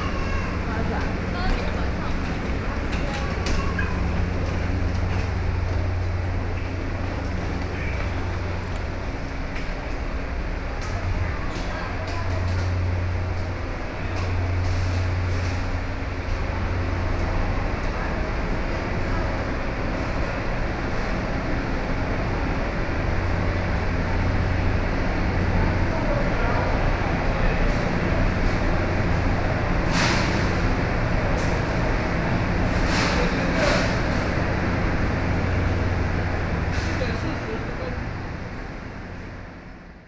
Hualien Station, Taiwan - walk in the Station
Through the underground passage to the platform, Sony PCM D50 + Soundman OKM II
Hualian City, Hualien County, Taiwan, 5 November